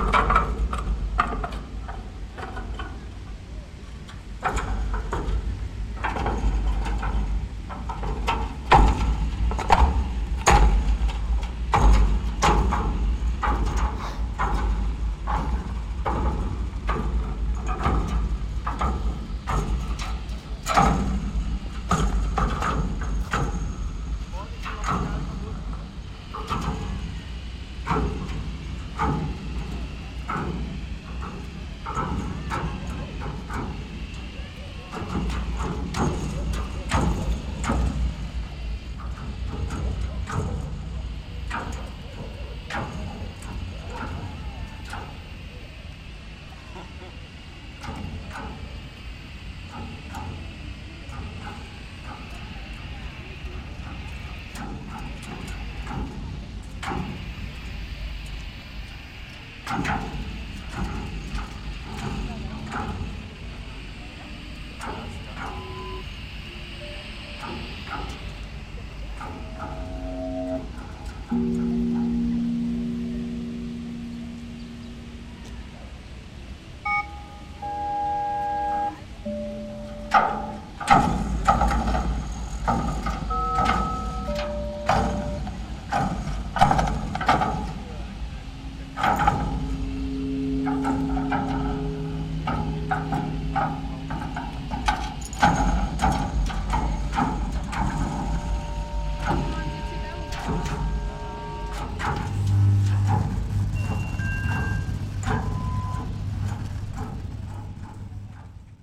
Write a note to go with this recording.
BuckyMedia, performance by Farmers Manual, Berlin, Alexanderplatz, tuned city, 03.07.2008, 16:45, people moving the bucky ball all over the place. wireless contact microphones transmit the vibrations, a dj remixes simultaneously with various soundtracks, Buckymedia is a work conceived by Farmers Manual as 80-sided, 5m diameter bucky balls, in reference to the architect and visionary Richard Buckminster Fuller. By moving these structures, the viewers can navigate through different interpretations of real and virtual spaces and time. Metaphorically speaking the big balls represent the globe, the net, and the circular communication of the web. As physical object they are a space within a space, it is an offer of a different type of architecture, one that is circular, expendable and infinite. Their meaning is created in the moment when a viewer is interacting with them - walking into and through them, standing within them, watching or even touching them.